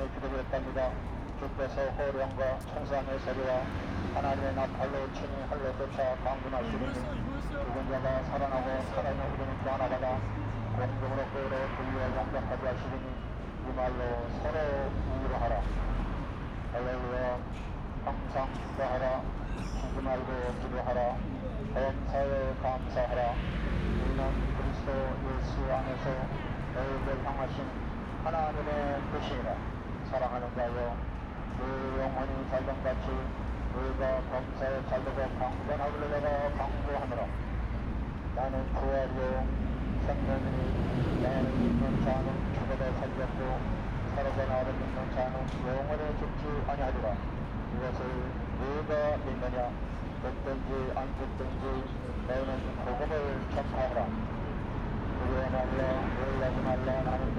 대한민국 서울특별시 강남역 - Gangnam Station, A Preacher on the Road
Gangnam Station, A Preacher on the Road
강남역, 길거리 전도사
4 October 2019